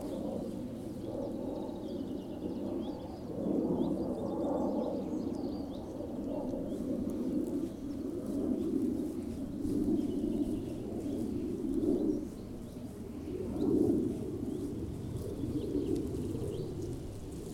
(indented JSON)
{
  "title": "Scottish Borders, UK - Jacob Sheep",
  "date": "2013-06-13 10:30:00",
  "description": "When I travelled to the Scottish Borders to run a workshop in knitting speaker pillows, I wanted to find some local fleece for making the stuffing. The fleece I found was on a nice flock of Jacob sheep, less than 10 miles from where we were staying! It's a lovely bouncy fleece, and the flock owners were really supportive of my project and allowed me to record the sheep so that I can play the sounds of the flock through the stuffing made from their wool. I love to connect places and wool in this way, and to create reminders that wool comes ultimately from the land. In this recording, the shy sheep kept evading me, as I wandered amongst them with 2 sound professional binaural microphones mounted on a twig with some cable-ties. The mics were approx 25cm apart, so not exactly stereo spaced, but hopefully give some impression of the lovely acoustics of this field, flanked on all sides with trees, and filled with ewes and their still-young lambs.",
  "latitude": "55.60",
  "longitude": "-2.66",
  "altitude": "110",
  "timezone": "Europe/London"
}